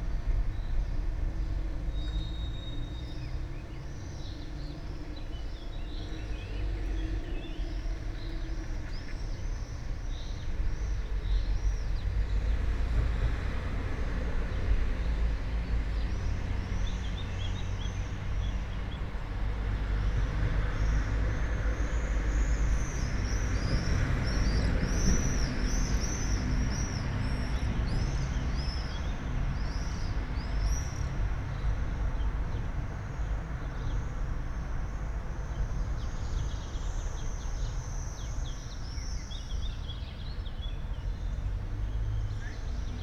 all the mornings of the ... - jun 16 2013 sunday 08:43